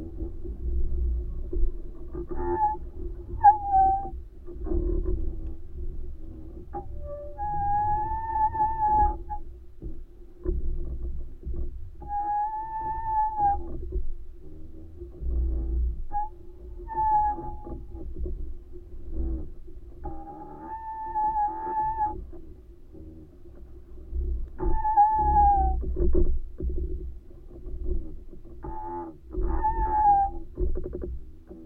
Utena, Lithuania, tree that plays
I have named this pine tree "a cello". Avantgarde, with SunnO))) overtones...contact mics recording.
4 March 2021, 16:50